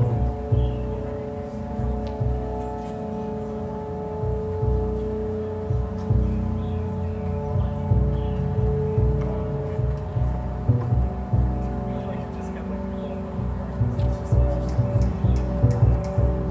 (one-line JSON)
{"title": "Sorauren Park Town Square, Wabash Ave, Toronto, ON, Canada - Jazz Park Sound Fest", "date": "2020-06-28 15:24:00", "description": "Brought speakers to a park with sounds of previous days playing through them. Ian playing bass on top. Recorded all together", "latitude": "43.65", "longitude": "-79.44", "altitude": "104", "timezone": "America/Toronto"}